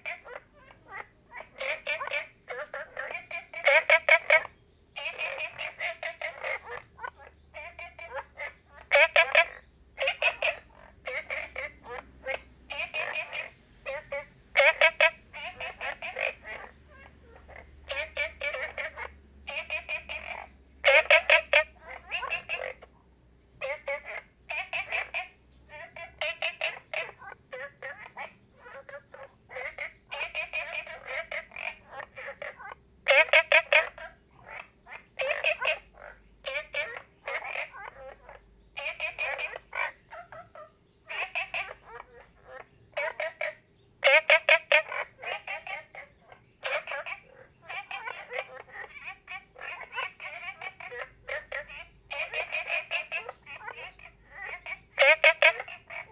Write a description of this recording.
Frogs chirping, Ecological pool, Zoom H2n MS+XY